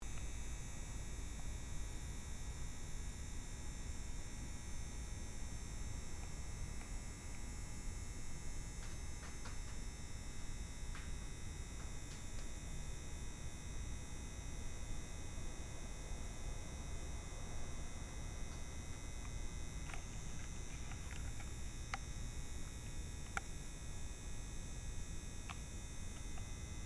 bicycle-parking area
愛知 豊田 noize
15 July